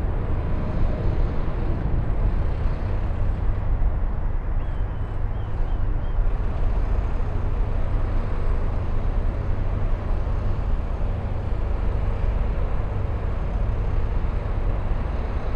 Crossland Rd, Reading, UK - Reading Quaker Meeting House Graveyard
A ten minute meditation in the graveyard behind the Quaker Meeting House in Reading. (Sennheiser 8020s spaced pair with SD MixPre6)
8 November 2017, 12:40